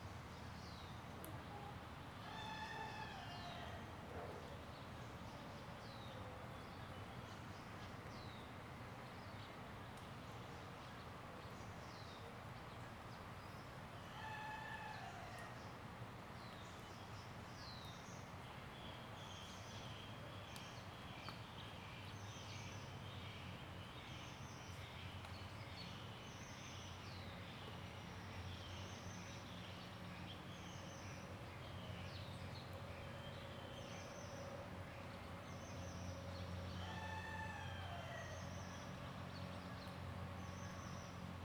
埔里鎮水上巷2號, Puli Township - Chicken sounds
in the morning, Chicken sounds
Zoom H2n MS+XY